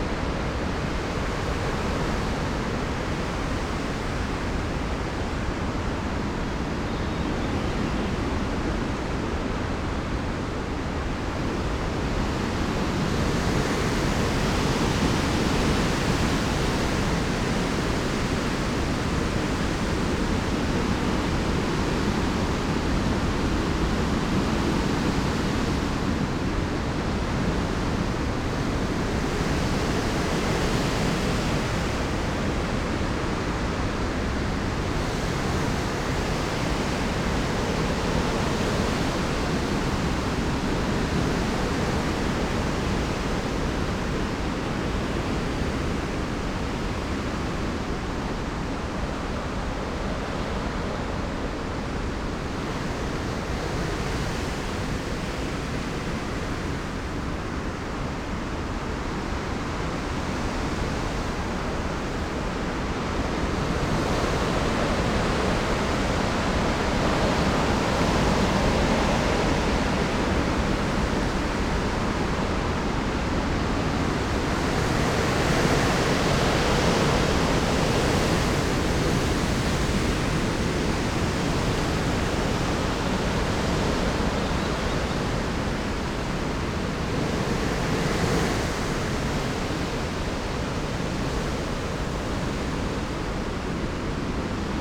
storm gareth blows through the ampitheatre ... calcott moor nature reserve ... pre-amped mics in a SASS ... very occasional bird song ...
Wedmore, UK, 27 April, 10:20